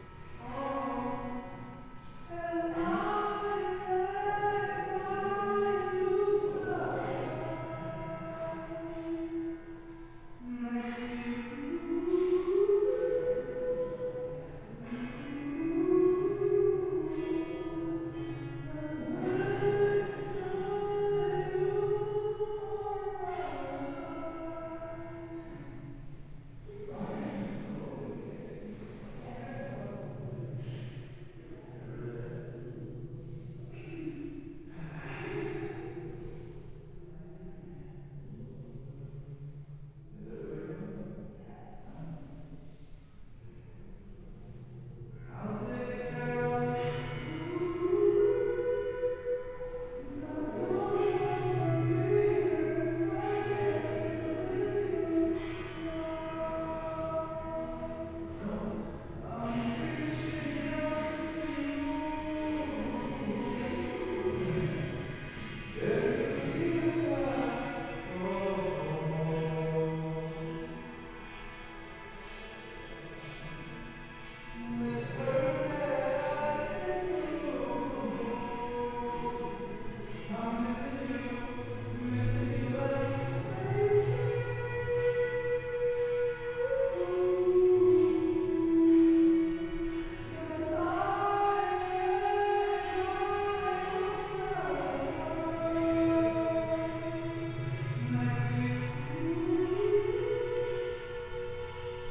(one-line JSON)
{"title": "Müggelturm - inside tower ambience, singer", "date": "2016-10-16 15:35:00", "description": "place revisited on an gray autumn Sunday afternoon. A singer performs a few steps below.\n(Sony PCM D50, Primo EM172)", "latitude": "52.42", "longitude": "13.63", "altitude": "85", "timezone": "Europe/Berlin"}